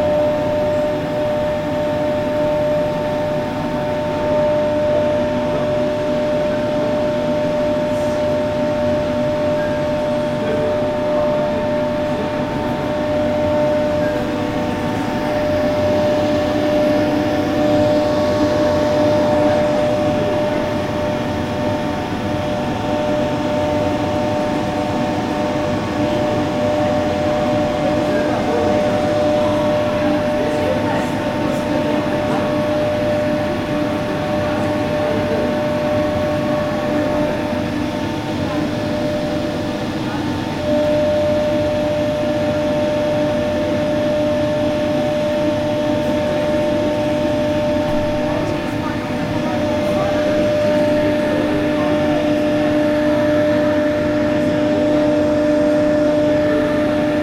Teleferik (Gondola), Istanbul
The Teleferik is a gondola that passes of Macka Park